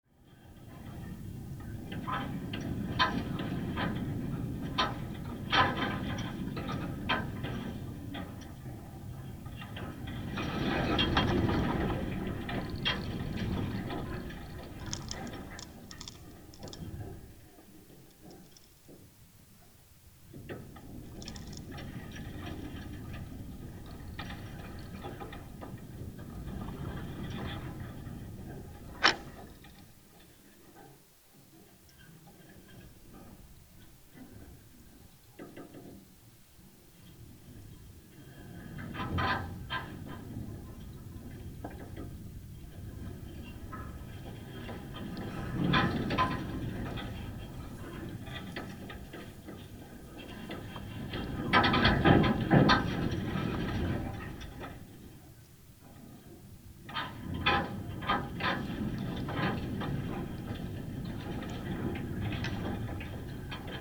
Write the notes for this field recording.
a fence in the wind as heard through contact microphone